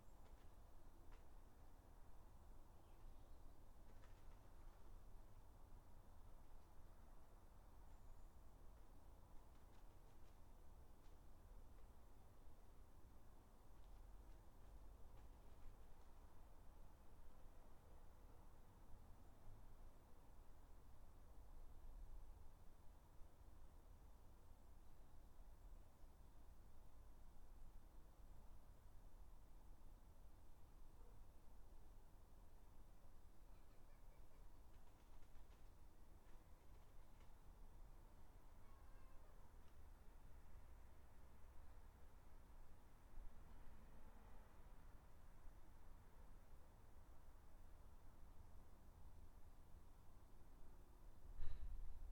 Dorridge, West Midlands, UK - Garden 6
3 minute recording of my back garden recorded on a Yamaha Pocketrak